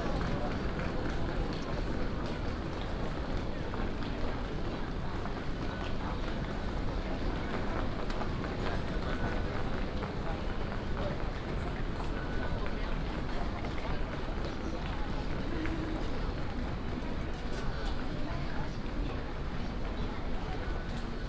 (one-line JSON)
{"title": "Taipei, Taiwan - Transhipment hall", "date": "2016-05-16 12:09:00", "description": "Transhipment hallㄝ at the station", "latitude": "25.05", "longitude": "121.52", "altitude": "29", "timezone": "Asia/Taipei"}